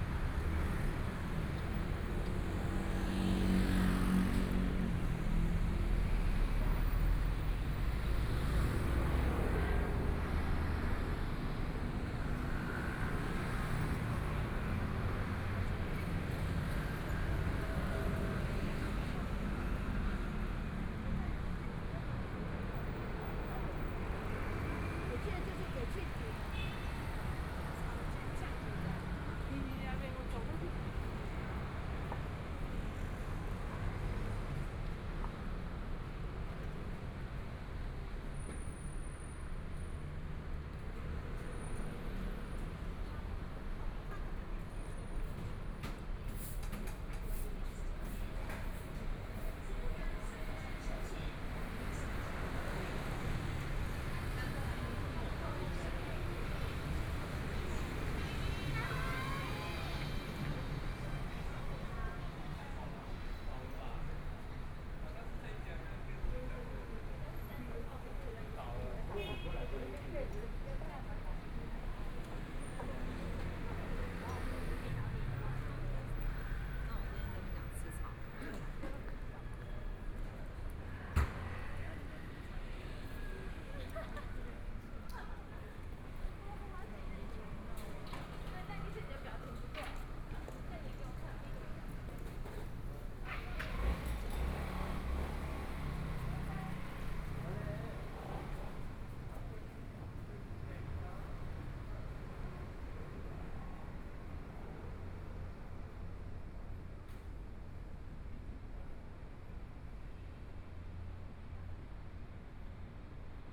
Night walk in the alley, Went to the main road from the alley, Traffic Sound
Binaural recordings
Zoom H4n+ Soundman OKM II
17 February, 19:23